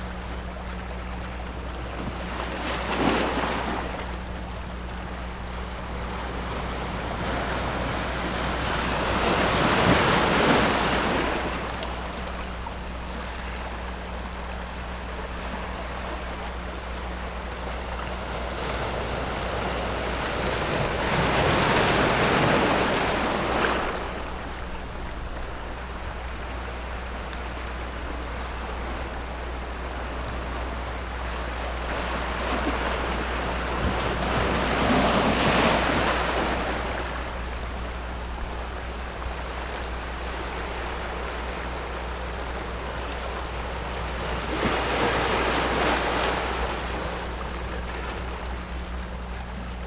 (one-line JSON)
{"title": "頭城鎮龜山里, Yilan County - Rocks and waves", "date": "2014-07-29 16:34:00", "description": "Sitting on the rocks, Rocks and waves, Sound of the waves, Very hot weather\nZoom H6+ Rode NT4", "latitude": "24.94", "longitude": "121.89", "timezone": "Asia/Taipei"}